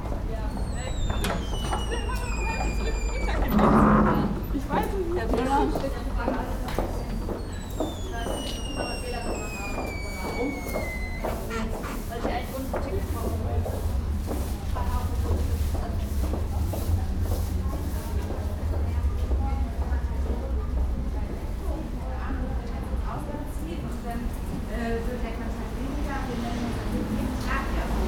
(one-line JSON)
{"title": "Düsseldorf, Heinrich Heine Allee, Kaufhof, door - düsseldorf, heinrich heine allee, kaufhof, door", "date": "2011-01-24 14:02:00", "description": "the sounding entrance door of the old kaufhof building - some passengers and shoppers passing by\nsoundmap d - social ambiences and topographic field recordings", "latitude": "51.23", "longitude": "6.78", "altitude": "46", "timezone": "Europe/Berlin"}